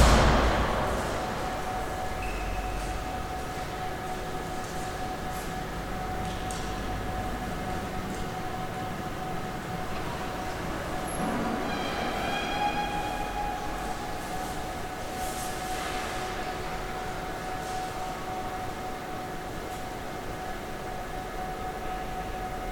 Large reverberant waiting hall of Kaunas city train station. Recorded with ZOOM H5.